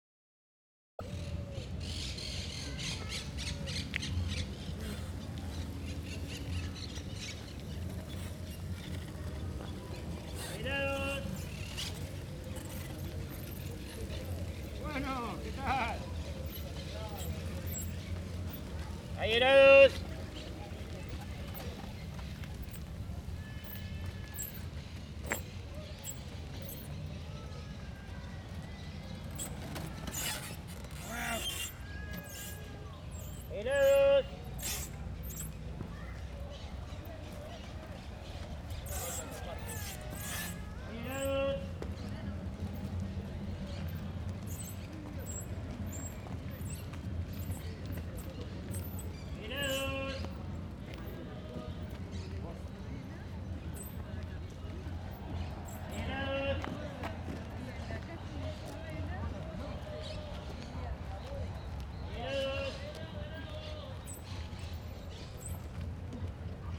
Punta Carretas, Montevideo, Uruguay - Heladero en Parque Rodó
Man selling ice-cream in the parc. He passed by me very close.